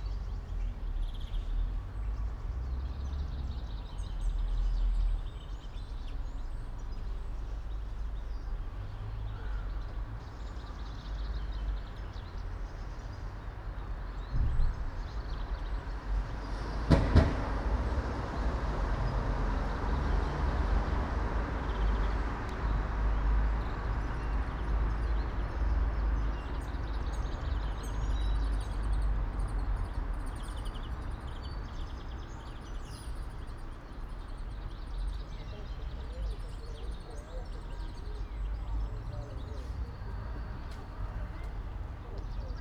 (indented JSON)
{
  "title": "all the mornings of the ... - apr 7 2013 sun",
  "date": "2013-04-07 09:17:00",
  "latitude": "46.56",
  "longitude": "15.65",
  "altitude": "285",
  "timezone": "Europe/Ljubljana"
}